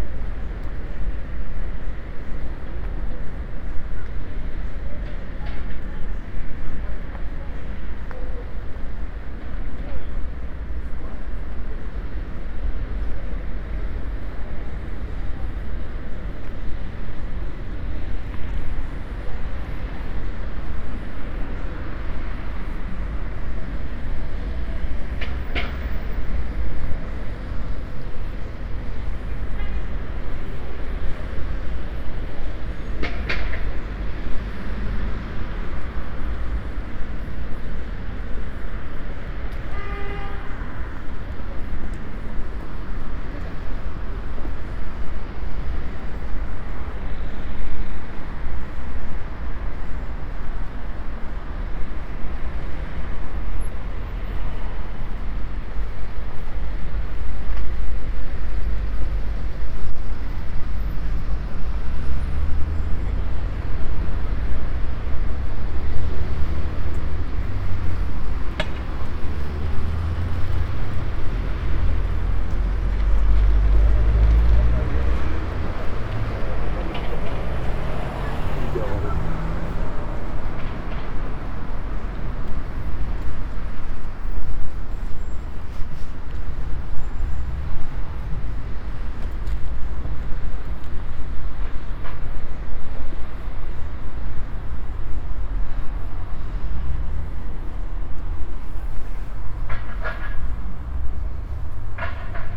METS-Conservatorio Cuneo: 2019-2020 SME2 lesson1C - “Walking lesson SME2 in three steps: step C”: soundwalk
METS-Conservatorio Cuneo: 2019-2020 SME2 lesson1C
“Walking lesson SME2 in three steps: step C”: soundwalk
Thursday, October 1st 2020. A three step soundwalk in the frame of a SME2 lesson of Conservatorio di musica di Cuneo – METS department.
Step C: start at 10:57 a.m. end at 11:19, duration of recording 22’19”
The entire path is associated with a synchronized GPS track recorded in the (kmz, kml, gpx) files downloadable here:
Piemonte, Italia